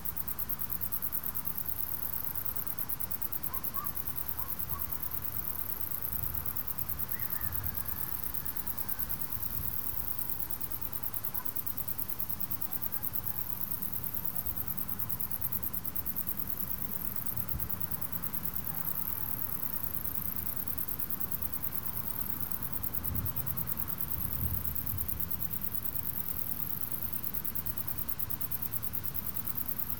Poznan, Morasko, field road - panned crickets
crickets on both sides of the field road make a great panned chirp, oscillating in unison. Headphones suggested for this one.